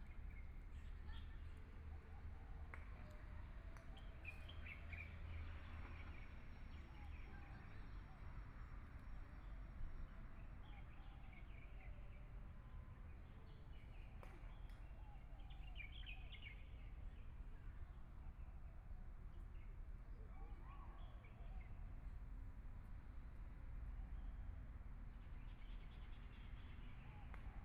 Traffic Sound, Birdsong, Aircraft flying through
Please turn up the volume
Binaural recordings, Zoom H4n+ Soundman OKM II